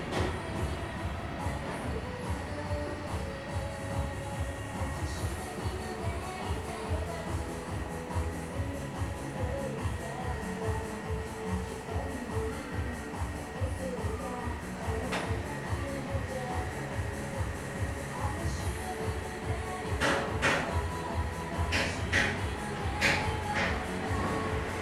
Xiujiang St., Sanchong Dist., New Taipei City - In the auto repair shop
In the auto repair shop, Traffic Sound
Sony Hi-MD MZ-RH1 +Sony ECM-MS907
New Taipei City, Taiwan